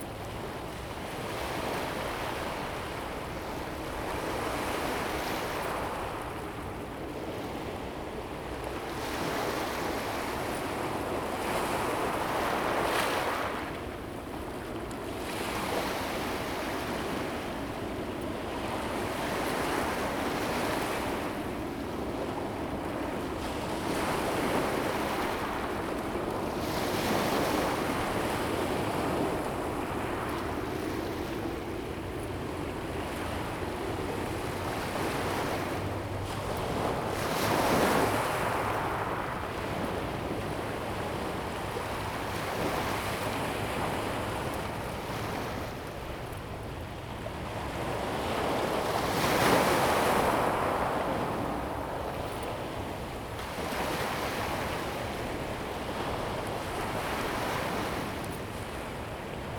Kanding, Tamsui Dist., New Taipei City, Taiwan - at the seaside
at the seaside, Sound waves, Aircraft flying through
Zoom H2n MS+XY + H6 XY